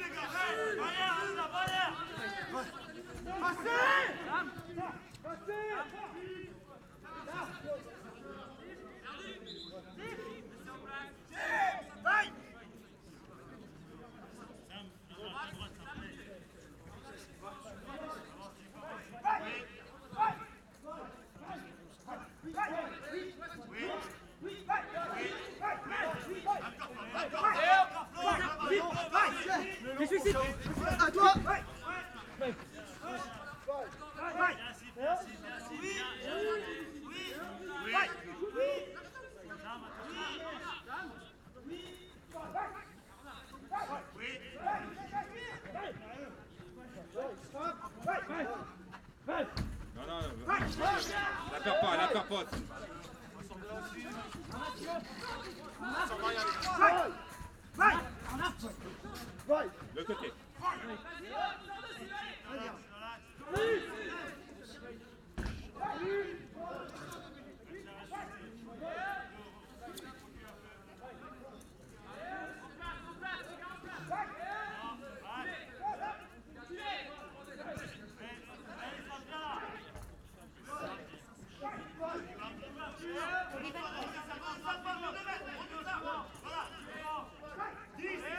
Rue de Sorel, Précy-sur-Oise, France - L'essentiel est invisible pour les yeux
Match de championnat de France / poule Nord de Cécifoot opposant Précy-sur-Oise et Schiltigheim.
Blind foot match of the French League / Nord pool, opposing Précy-sur-Oise and Schiltigheim.
Zoom H5 + clippy EM272
France métropolitaine, France